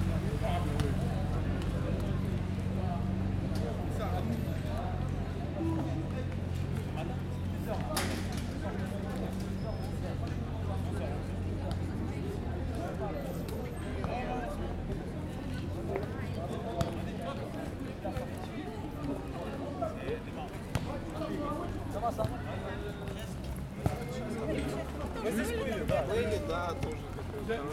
Montmartre, Paris, France - Place des Abbesses
Place des Abbesses, Paris.
Sounds from the street: groups of tourists passing by and a group of young adults and kids playing football. Bell sounds from the Église Saint-Jean-de-Montmartre.